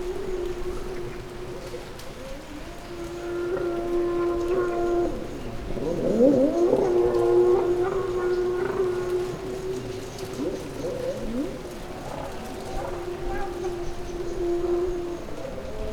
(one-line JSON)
{"title": "Funchal, Residencial Pina - powerboats", "date": "2015-05-03 11:04:00", "description": "sounds of jetboat engines in the marina spreading all over the city.", "latitude": "32.66", "longitude": "-16.91", "altitude": "89", "timezone": "Atlantic/Madeira"}